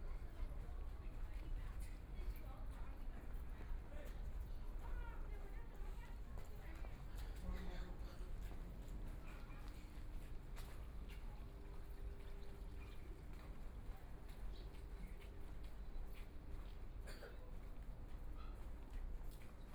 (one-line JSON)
{"title": "Huangpu District, Shanghai - Walking through the old house", "date": "2013-11-29 16:27:00", "description": "Walking in the roadway in the community, Old area is about to be demolished, Walking in the narrow old residential shuttle, Binaural recording, Zoom H6+ Soundman OKM II", "latitude": "31.23", "longitude": "121.48", "altitude": "10", "timezone": "Asia/Shanghai"}